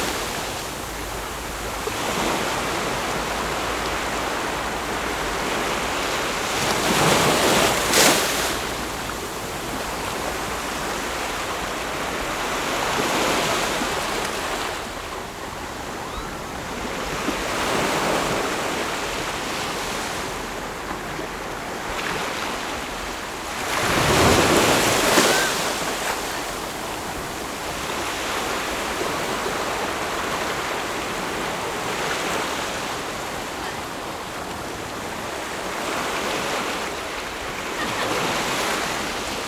Shimen, New Taipei City - The sound of the waves
25 June, 15:21